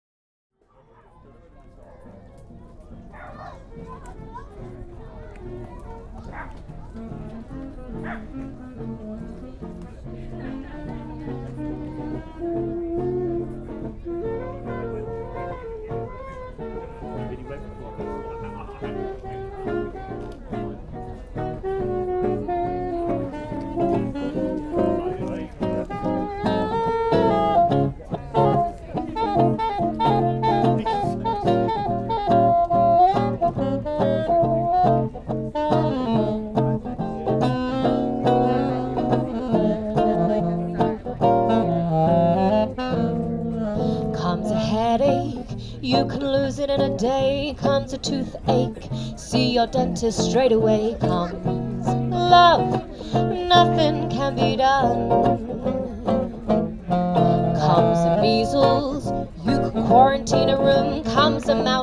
Singer, Victoria Park, London, UK - Singer
This singer performed while I was walking through Victoria Park Food Market.
MixPre 3 with 2 x Sennheiser MKH 8020s
England, United Kingdom